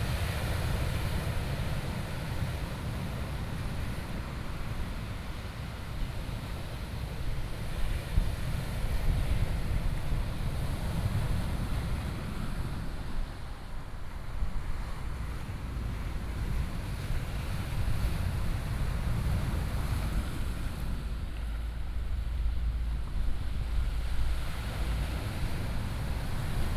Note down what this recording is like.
Sound of the waves, Traffic Sound, Very hot weather, Sony PCM D50+ Soundman OKM II